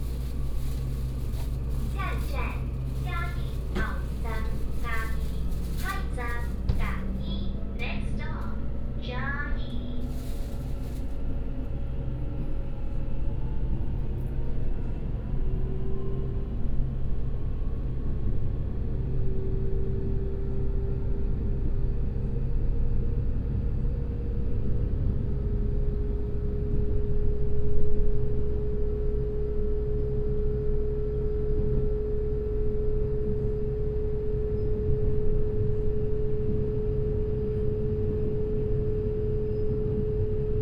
Train compartment, Broadcast Message, from Jiabei Station to Chiayi Station
南投縣, 中華民國, 12 May 2016, 15:16